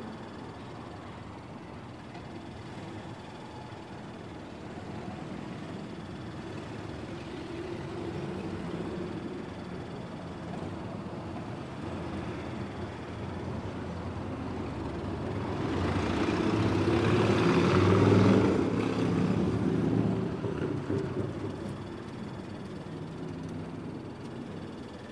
For this recording, I mounted an H4N onto my bike and pressed the red button. This recording was part of the Sonic Terrain World Listening Day 2014 Compilation [STR 015].
AB, Canada, 7 April 2014